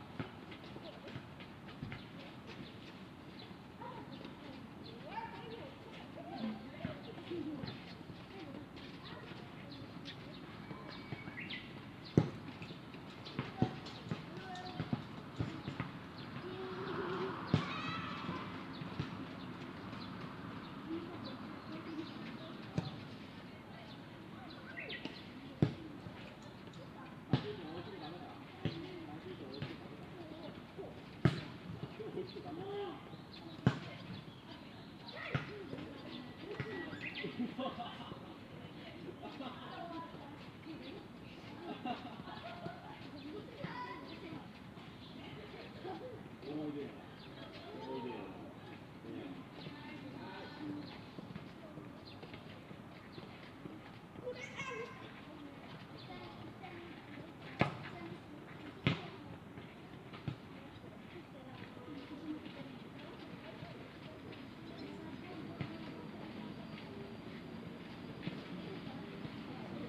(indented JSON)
{
  "title": "Sakamoto, Otsu, Shiga, Japan - 202006151758 Tenmangu Public Park",
  "date": "2020-06-15 17:58:00",
  "description": "Title: 202006151758 Tenmangu Public Park\nDate: 202006151758\nRecorder: Sound Devices MixPre-6 mk1\nMicrophone: Luhd PM-01Binaural\nLocation: Sakamoto, Otsu, Shiga, Japan\nGPS: 35.080736, 135.872991\nContent: binaural soccer japan japanese boys sports children practice park cars",
  "latitude": "35.08",
  "longitude": "135.87",
  "altitude": "147",
  "timezone": "Asia/Tokyo"
}